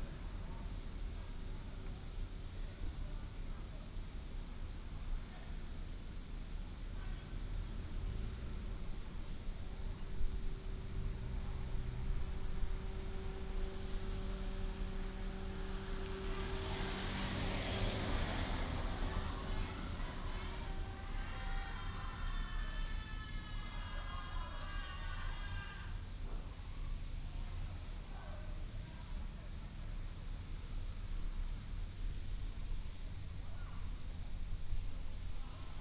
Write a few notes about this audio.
In the square outside the police station, Traffic sound, Tourists